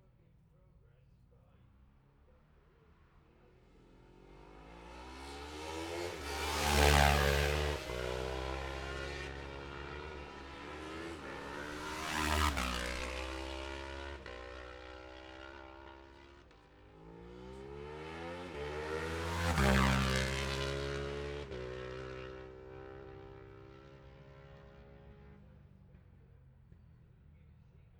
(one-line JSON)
{"title": "Jacksons Ln, Scarborough, UK - olivers mount road racing ... 2021 ...", "date": "2021-05-22 10:32:00", "description": "bob smith spring cup ... twins group B practice ... dpa 4060s to MixPre3 ...", "latitude": "54.27", "longitude": "-0.41", "altitude": "144", "timezone": "Europe/London"}